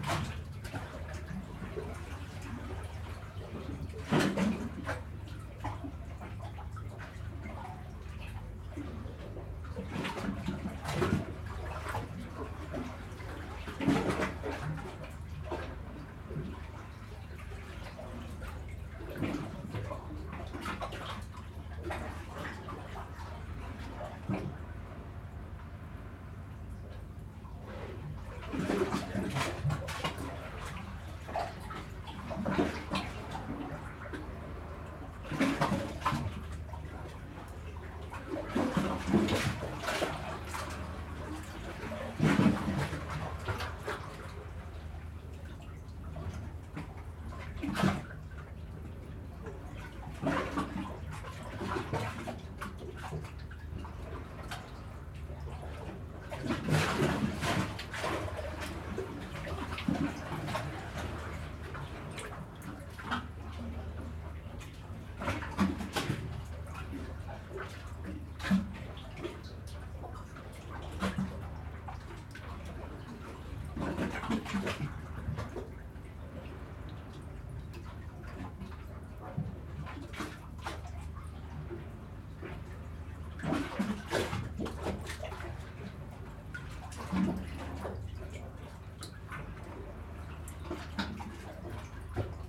Saltdean, East Sussex, UK - Beneath the wave breaker rocks
This recording was made through a gap in the rocks, where the hollow spaces in between the rocks colour the sound.
(zoom H4n internal mics)
Brighton, The City of Brighton and Hove, UK, 8 April 2015